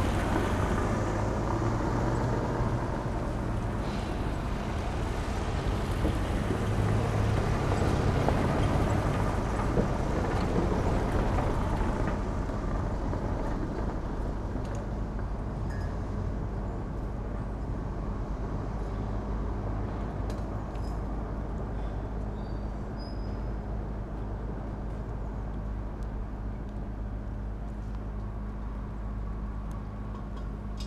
Berlin: Vermessungspunkt Friedel- / Pflügerstraße - Klangvermessung Kreuzkölln ::: 20.09.2013 ::: 13:16